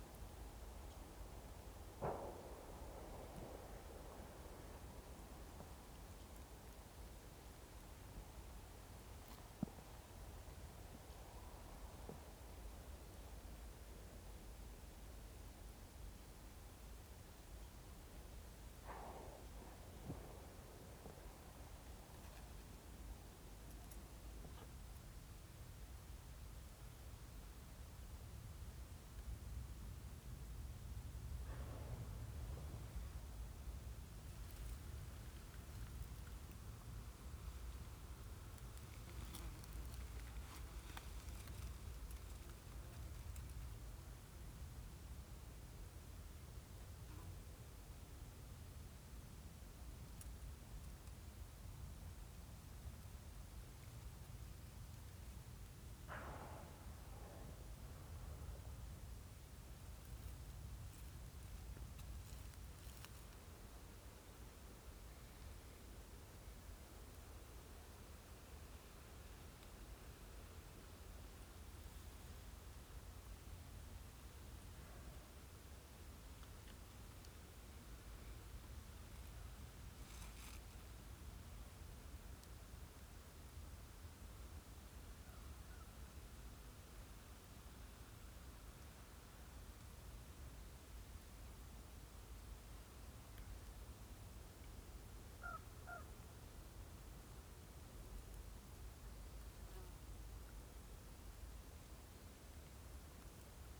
Beluga whales taking breaths in the fjord 64M5+9P L'Anse-de-Roche, QC, Canada - Beluga whales taking breaths
The sounds of beluga whales surfacing to breathe as heard in the autumn forest high above the fjord. Two ravens pass by overhead. 3 of us watched and listened shuffling a little in the dry red and brown leaves underfoot. Such a peaceful spot and a very effecting experience. Sometimes the whales could be seen very briefly as they breached and disappeared.